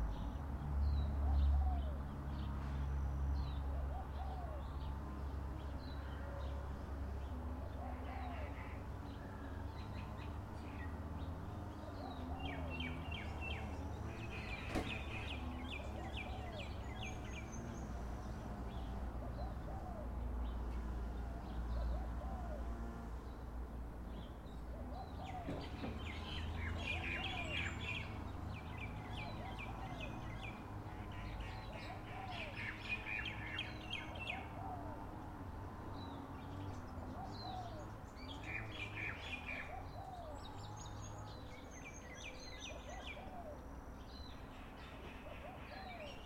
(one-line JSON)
{"title": "Totara Vale, Auckland, New Zealand - 7AM Breakfast Near Ellice Road", "date": "2012-03-07 07:30:00", "description": "This is a recording out of my window from my H4n during breakfast before I head for class.", "latitude": "-36.77", "longitude": "174.73", "altitude": "47", "timezone": "Pacific/Auckland"}